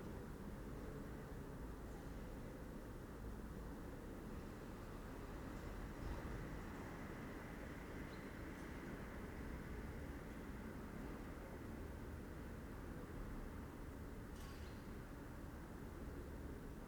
San Michele Church, Pavia, Italy - 04 - October, Tuesday 8am, foggy 11C, empty square few people passing by
First day of fog of the season, early morning, empty square, birds and few people passing by. an old woman steps out of the church and talking to herself complains about the fog.